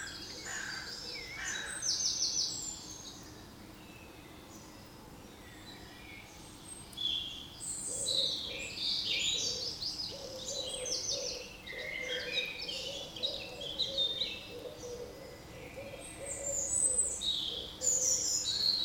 Mont-Saint-Guibert, Belgique - A quiet sunday morning on the pond
Morning recording of a pond without name near the Beclines street. It's a peaceful place, because it's almost abandoned. Some years ago, a Corbais real estate developer had the idea to build a lake city. It was rejected and since, it's an abandoned place. It's quite wild, there's trees fallen in the pond. Listen to all the friends the birds, I listed (at least), with french name and english name :
Rouge-gorge - Common robin
Merle noir - Common blackbird
Poule d'eau - Common moorhen
Pouillot véloce - Common chiffchaff
Tourterelle turque - Eurasian Collared Dove
Pigeon ramier - Common Wood Pigeon
Choucas des tours - Western Jackdaw
Troglodyte mignon - Eurasian Wren
Mésange bleue - Eurasian Blue Tit
Mésange charbonnière - Great Tit
Corneille noire - Carrion Crow
Pie bavarde - Eurasian Magpie
(shortly 45:23) Canard colvert - Mallard
Très loin - vache, coq. Plus près : chien, homo sapiens, trains, avions pénibles.
Far - cow, rooster. Closer : dog, homo sapiens, trains, painful planes.